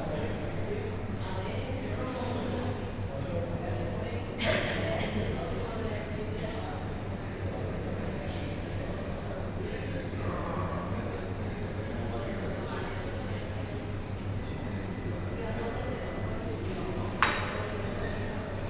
Tarifa, harbour, waiting for the speed ferry
4 April 2011, 09:30